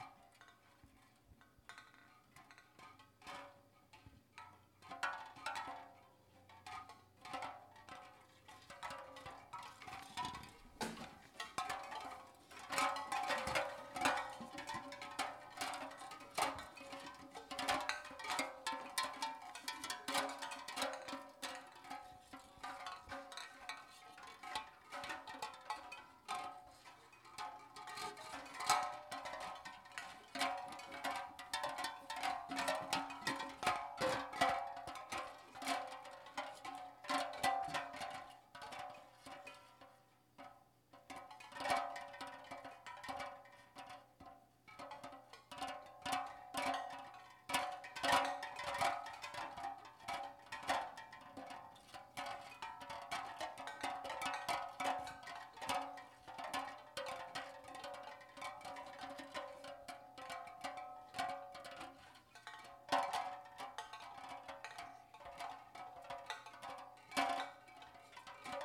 Bolton Hill, Baltimore, MD, USA - Cans
Strapping together aluminum cans on wooden dowels to dry.